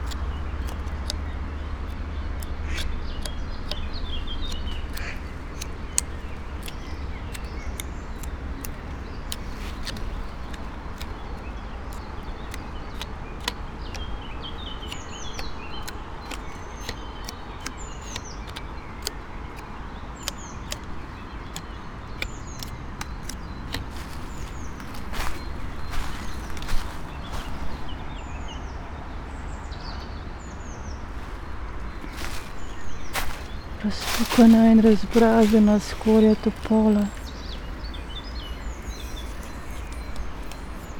poplar tree, river drava, melje - bark
Malečnik, Slovenia, 6 April 2014, ~15:00